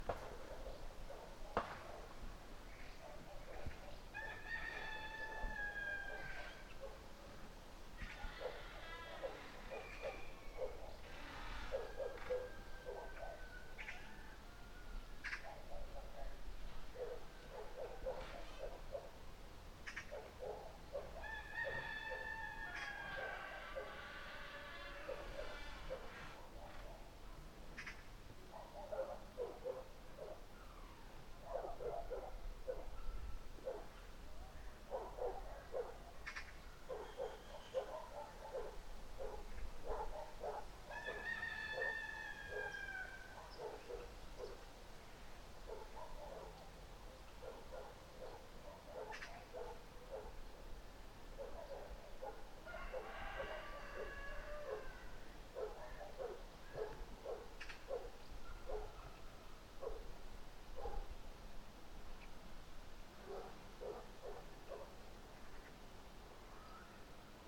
Опеченский Посад, Боровичский район, Новгородская область, Россия - Opechensky Posad July 29 2013 daytime
Summertime recording in a small Russian town called Opechensky Posad with distant dogs and cocks.
Russia